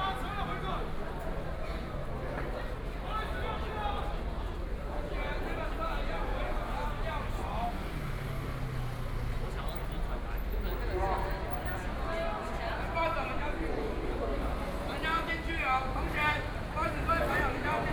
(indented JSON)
{
  "title": "Qingdao E. Rd., Zhongzheng Dist. - protest",
  "date": "2014-03-21 18:17:00",
  "description": "Walking through the site in protest, People and students occupied the Legislature\nBinaural recordings",
  "latitude": "25.04",
  "longitude": "121.52",
  "altitude": "11",
  "timezone": "Asia/Taipei"
}